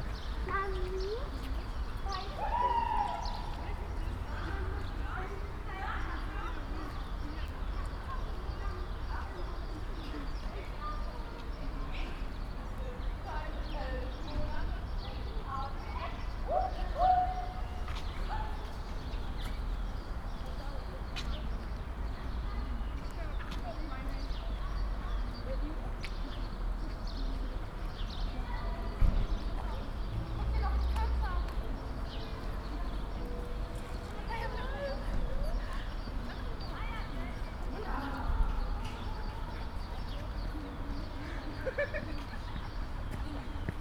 Hufeland Oberschule, Walter-Friedrich-Straße, Berlin-Buch - street ambience near school
street in front of Hufeland school, only a few pupils around, the sound of a gong
(Sony PCM D50, DPA4060)